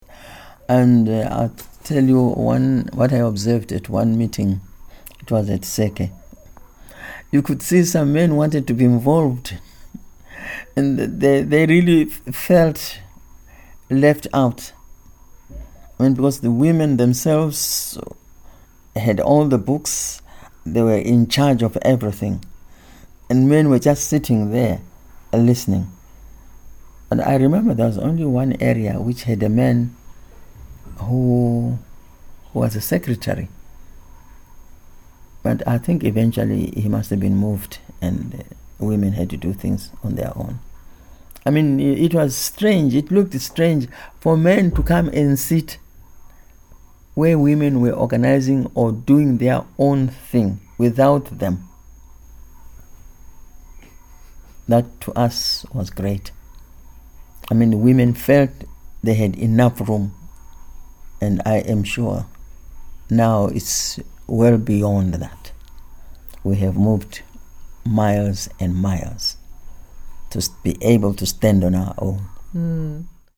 Emerald Hill, Harare, Zimbabwe - Mavis Moyo and women taking matters in their own hands…
...Mavis tells one story of rural women taking matters in their own hands…